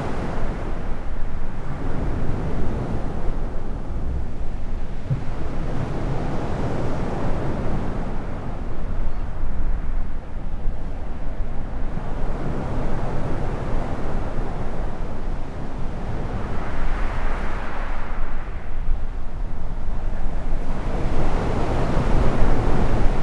{
  "title": "Waves Under The Pier, Southwold, Suffolk, UK - Waves",
  "date": "2018-06-07 15:53:00",
  "description": "Recording under the pier produces a slightly different acoustic to the gently breaking waves.\nCaptured with a MixPre 3 and 2 x Rode NT5s",
  "latitude": "52.33",
  "longitude": "1.69",
  "altitude": "3",
  "timezone": "Europe/London"
}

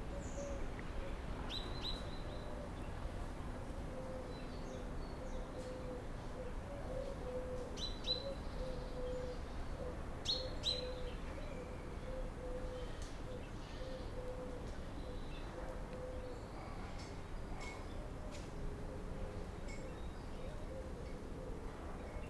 {
  "title": "вулиця Петропавлівська, Київ, Украина - morning voices birds of Kiev",
  "date": "2018-09-03 06:37:00",
  "description": "Хмурое утро в Киеве встречают городские птицы и общественный транспорт",
  "latitude": "50.49",
  "longitude": "30.47",
  "altitude": "131",
  "timezone": "Europe/Kiev"
}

